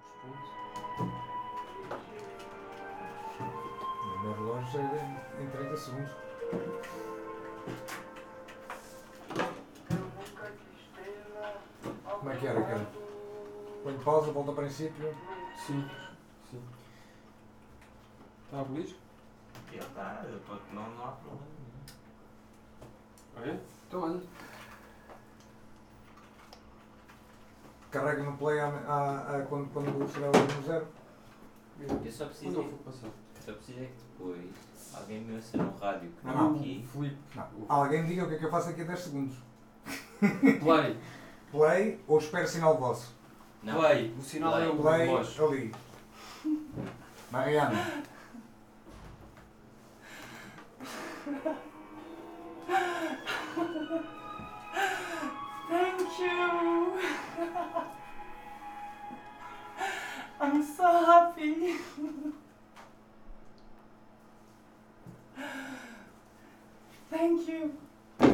porto, r. de passos manuel - futureplaces festival opening
radio zero / radio futura opening broadcast of the futureplaces festival porto. marianne performing.